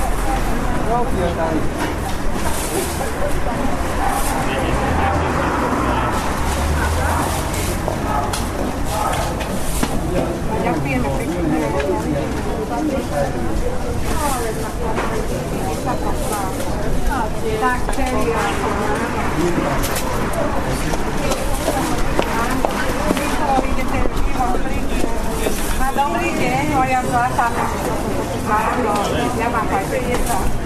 bratislava, market at zilinska street - market atmosphere I
3 September 2010